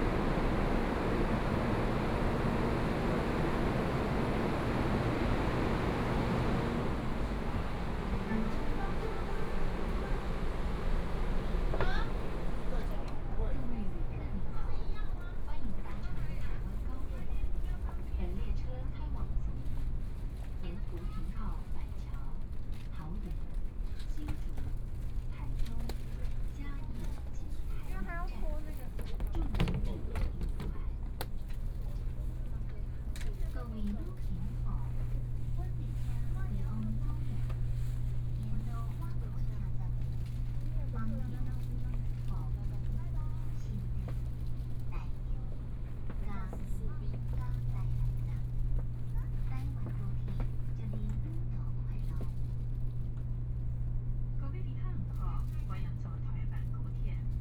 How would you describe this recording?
Taiwan High Speed Rail, Walking into the car from the platform, Messages broadcast station, Zoom H4n+ Soundman OKM II